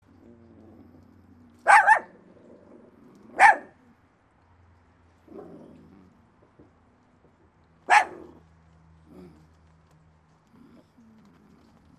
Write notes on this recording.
Elroy - the famous nervous chihuahua